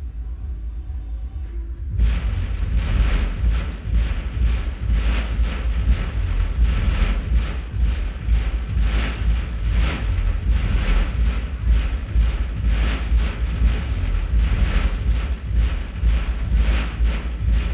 DasWerkWien - 4 a.m. outside the club
resonating metalplate outside a technoclub. recorded with 2 omni mics in olson wing array and sd302 mixer.